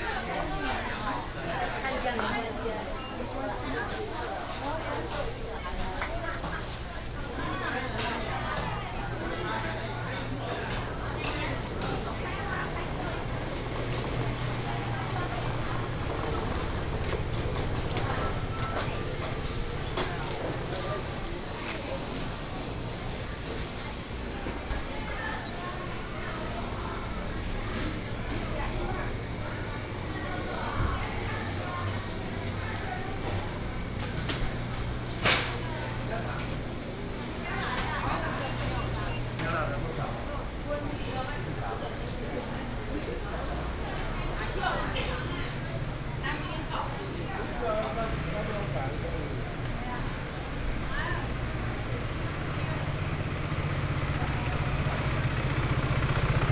walking in the Traditional market, vendors peddling, Binaural recordings, Sony PCM D100+ Soundman OKM II
12 September, ~09:00